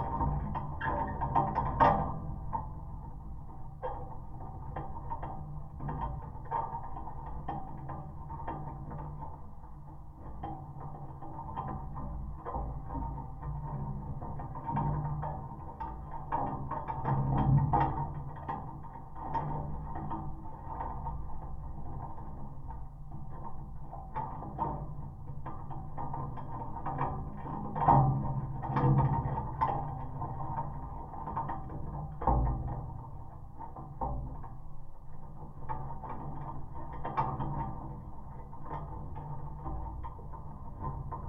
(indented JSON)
{
  "title": "Vilnius, Lithuania, flag stick",
  "date": "2020-10-17 14:05:00",
  "description": "Winter skiing tracks and lifts. Geophone on flag stick.",
  "latitude": "54.66",
  "longitude": "25.31",
  "altitude": "220",
  "timezone": "Europe/Vilnius"
}